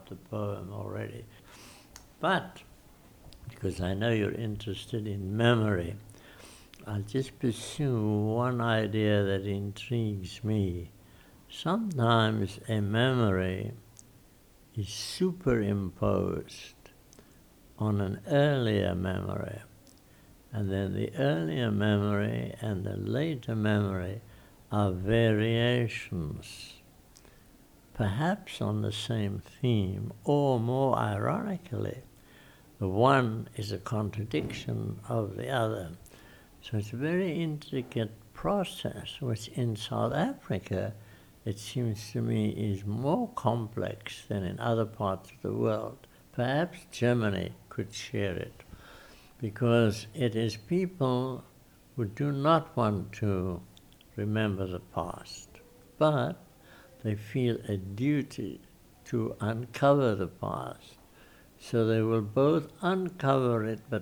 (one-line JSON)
{"title": "University of Kwa-ZuluNatal, CCS, South Africa - the late Dennis Brutus speaks truth to South African history...", "date": "2009-02-05 15:30:00", "description": "the late Dennis Brutus, poet, activist, freedom fighter talks about the challenges of memory and history in South Africa. the recording was made in Dennis' office at the Centre for Civil Society where Dennis was active as Honorary Professor at the time. The recording was made in the context of the Durban Sings project and is also part of its collection.\nlisten to the entire interview with Dennis here:", "latitude": "-29.87", "longitude": "30.98", "altitude": "145", "timezone": "Africa/Johannesburg"}